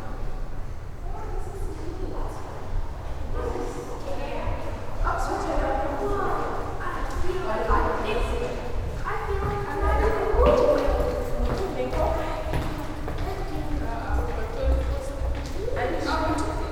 Light Travellers, Wilson Tunnel, Houston, Texas - James Turrell, The Light Inside, Wilson Tunnel, MFAH
Binaural: People walking through the underground tunnel that houses James Turrell's 'The Light Inside', and links the MFAH's two buildings.
Laughing, talking, footsteps, neon buzz, AC
CA14 omnis > Tascam DR100 MK2